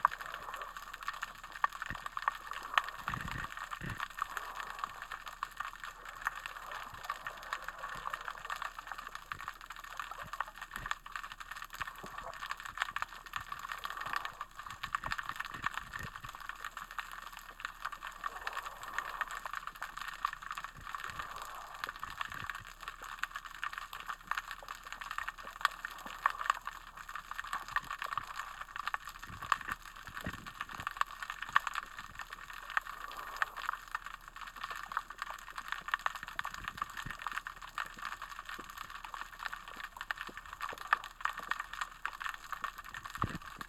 Juan-les-Pins, Antibes, France - Listening underwater
This is the sound underwater in a bay in Antibes where we snorkelled and swam. I don't know what all the tiny popping, crackling sounds are? Perhaps they are the sounds of tiny underwater creatures making bubbles under the water... barnacles perhaps? It sounds very alive and I think the splish at some point in the recording was made by a fish. It was lovely to stand in the sea at night and eavesdrop on all the life beneath its surface in the dark. Recorded in mono with just one hydrophone plugged into EDIROL R-09. Apologies for the handling noise when the tide dragged the microphone cable around, but editing this sound out ruined the rhythm of the waves, so I thought better to leave it in.
Provence-Alpes-Côte-d'Azur, France métropolitaine, European Union